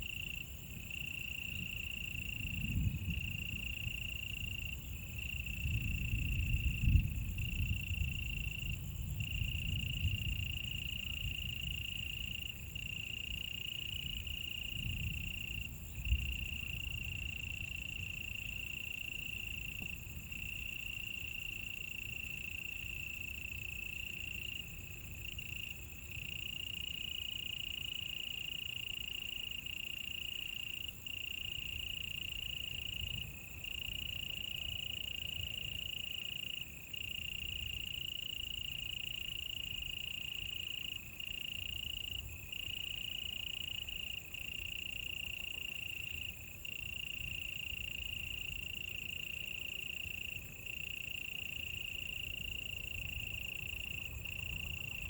Insects sounds, In the bamboo forest edge
Zoom H2n MS+XY